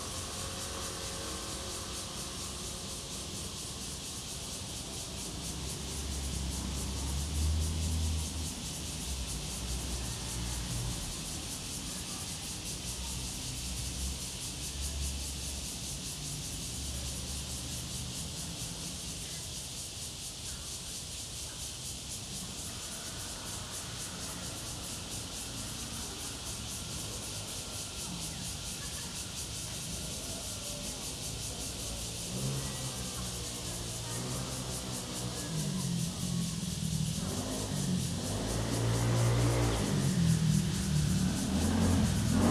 Xinlong Park, Da’an Dist. -, Cicadas cry and Birdsong
in the Park, Cicadas cry, Bird calls, Traffic Sound
Zoom H2n MS+XY
Taipei City, Taiwan, 28 June